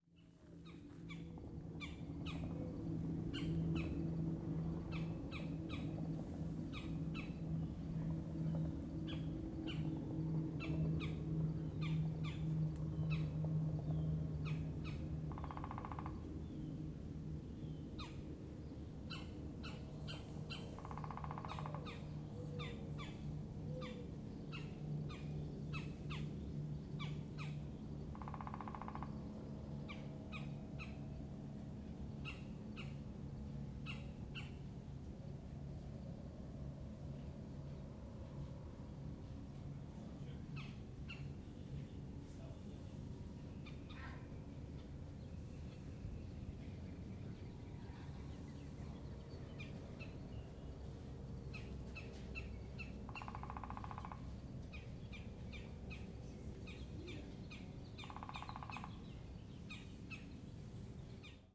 Durante Park, Longboat Key, Florida, USA - Durante Woodpecker
Boat followed by sound of woodpecker in Durante Park.